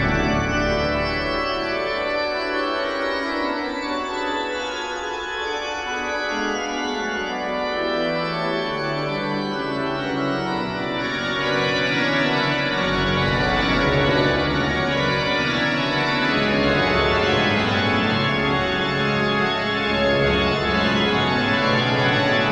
Organ concert Marienkirche - 7/7 Organ concert Marienkirche
07 Johann Sebastian Bach_ Prelude D-Dur